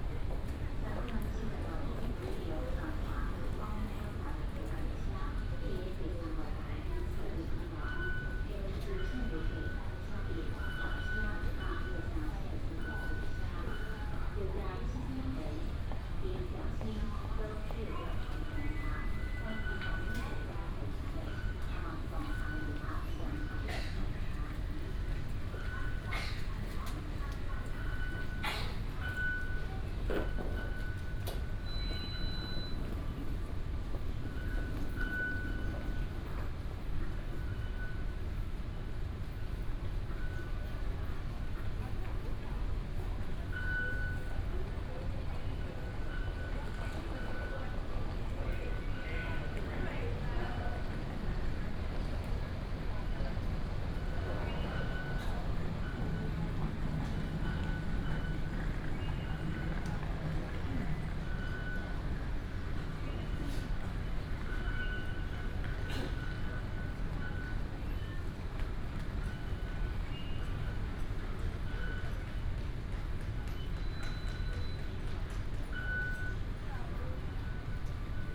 Taipei Station, Taipei city, Taiwan - In the station hall
In the station hall, Station information broadcast
Taipei City, Zhongzheng District, 台北車站(東三)(下客), 3 March 2017, 06:43